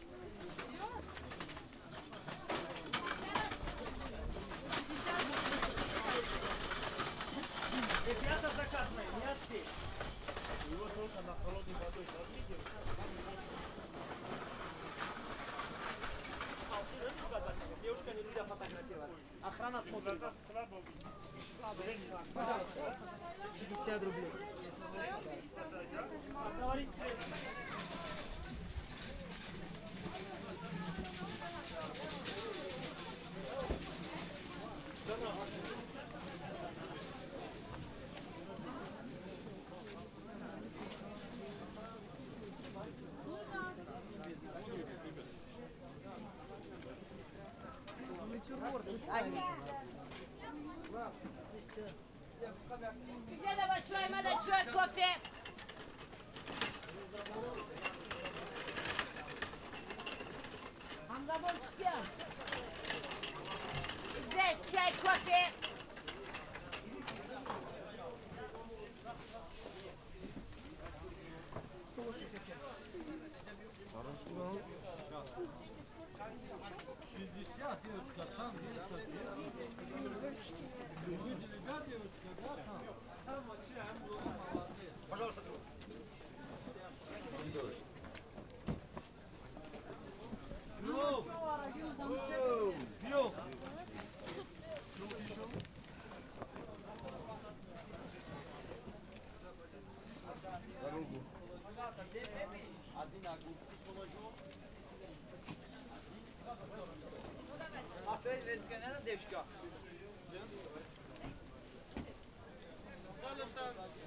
Sennoy market, Saint-Petersburg, Russia - Sennoy market
SPb Sound Map project
Recording from SPb Sound Museum collection
Sankt-Peterburg, Russia, 29 March 2015, ~16:00